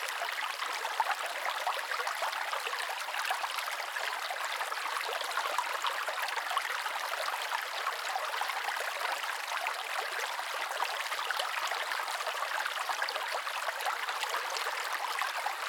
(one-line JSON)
{"title": "Derrysallagh, Geevagh, Co. Sligo, Ireland - Babbling Stream", "date": "2019-06-19 12:00:00", "description": "Recorded in the middle of a calm sunny day. Zoom H1 positioned as close to the surface of the stream as possible.", "latitude": "54.09", "longitude": "-8.22", "altitude": "85", "timezone": "Europe/Dublin"}